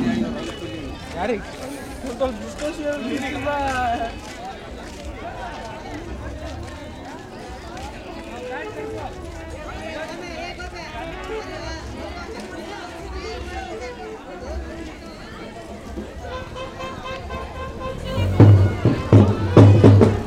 Hampi, Hampi Bazaar Street, Procession
India, Karnataka, Hampi, Procession, music